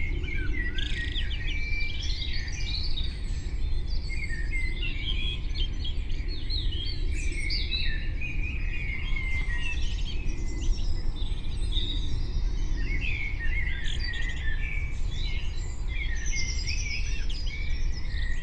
A real time recording by the wood shed at the end of the garden. This is 5am and the end of a 10 hour overnight capture. Ducks fly onto the pond, owls and birds call and thankfully there is hardly any traffic on Hanley Road. The cars you can hear are 2 -5 miles away their sounds reflecting from the Severn Valley floor up the lower slopes of the Malvern Hills. A mouse runs across in front of the recorder. A rat trap snaps. There is one sound early in this piece I cannot identify. I place the omni microphones in a 180 degree configuration on top of the rucksack which holds the recorder the whole kit then sits on a large chair an arms length from the pond facing south.
England, United Kingdom, 21 April 2022, 05:05